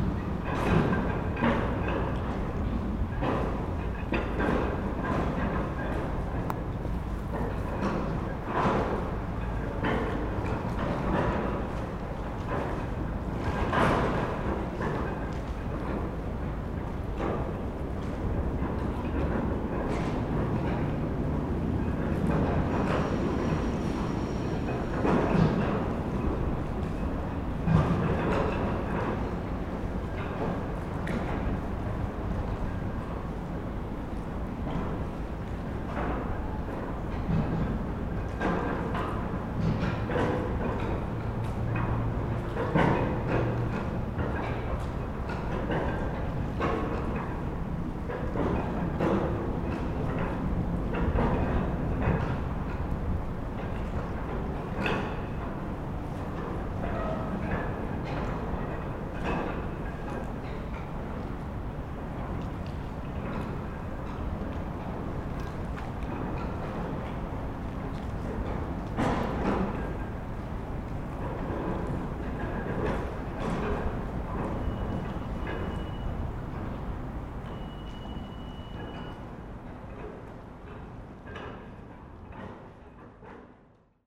Sèvres, France - Barge pontoon
A pontoon makes big noises with the waves on the Seine river.